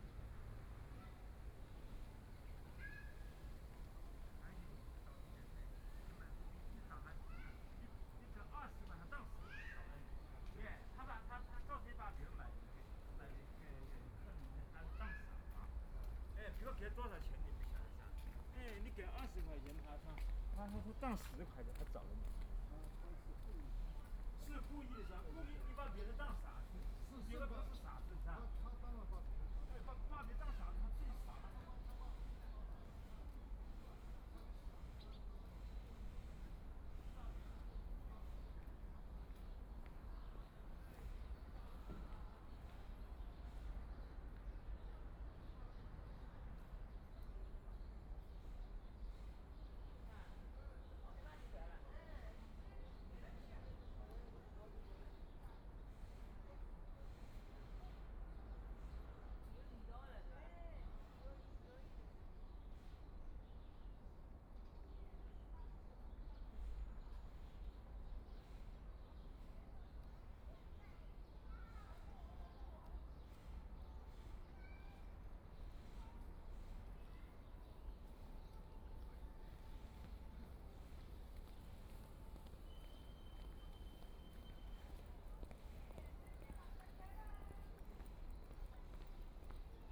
Penglai Park, Shanghai - in the park
Sitting in the park's entrance, Nearby residents into and out of the park, Binaural recording, Zoom H6+ Soundman OKM II